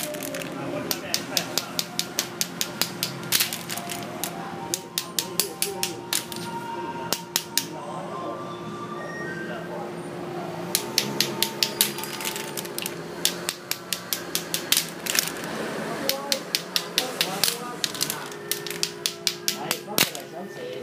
The store on the Kangle street 台南康樂街上商店 - Cleaning the clams
Cleaning the clams. 清洗與敲擊蛤仔
Tainan City, Taiwan, 10 March 2014